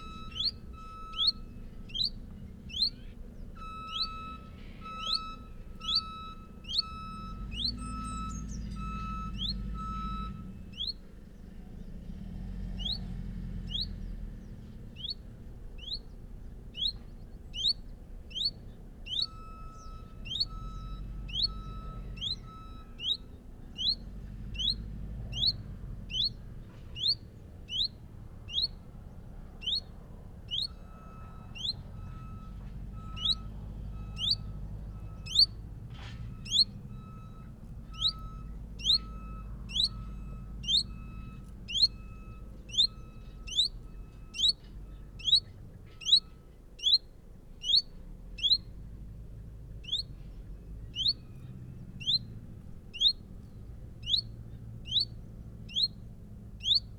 Unnamed Road, Malton, UK - chiffchaff and tractor ...
chiffchaff and tractor ... chiffchaff call ... juxta-positioned with the mating call of a reversing tractor ... loading bales onto an articulated lorry in the middle of a field ...